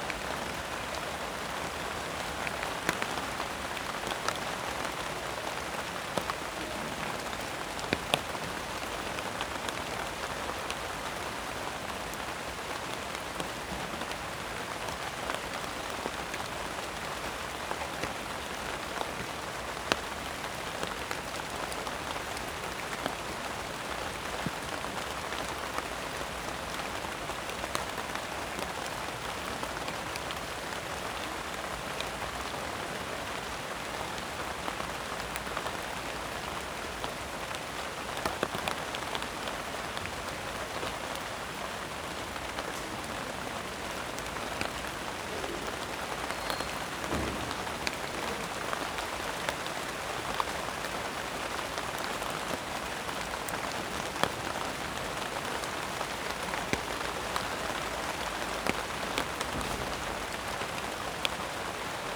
Steady rainfall recorded with the microphones on the ground sheltered under Japanese knotweed leaves. Two year ago there was a cherry tree is this Hinterhof. It provided much tasty fruit. Sadly it began to lean over and was cut down; almost the only act of gardening that has ever happened here. Now Japanese knotweed has taken over. Such an invasive plant.